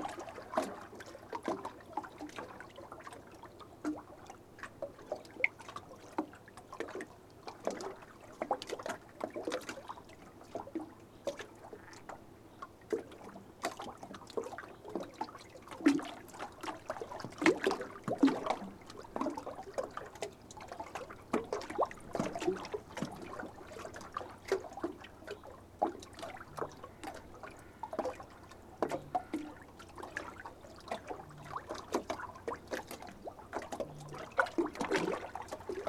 Lithuania, Dusetos, on the brewery pontoon
pontoob footbridge at the local brewery